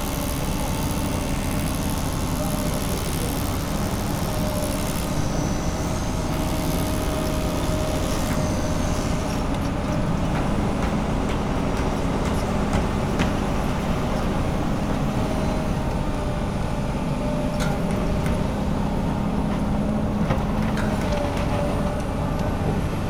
Centre, Ottignies-Louvain-la-Neuve, Belgique - Construction works
Huge construction works, with a lot of cranes and something like 40 workers.